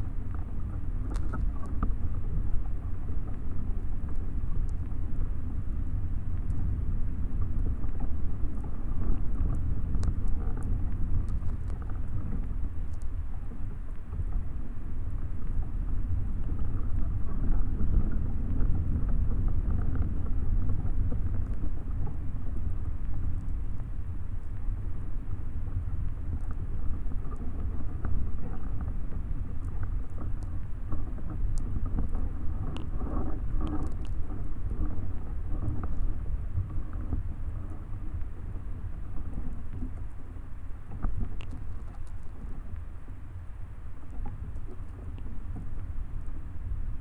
very strong wind. contact microphones placed amongs the trunks of the bush. at the same time I am recording atmospheric VLF emissions